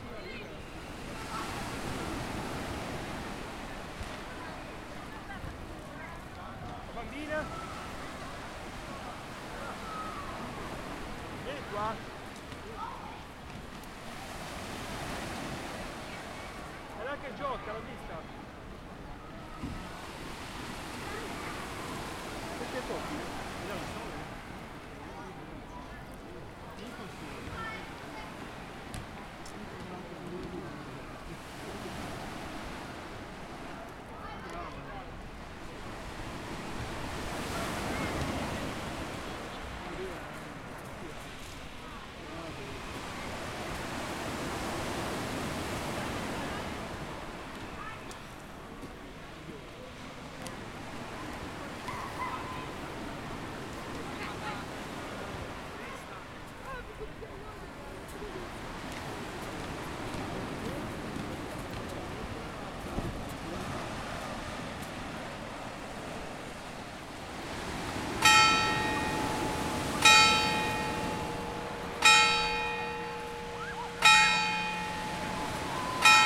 Camogli Genua, Italien - Feierabendsiesta
Feierabendstimmung an der Küste von Camogli. Das Glockenspiel der Kirche um 17.30 Uhr läutet den Abend ein. Meeresrauschen lädt zum Träumen ein.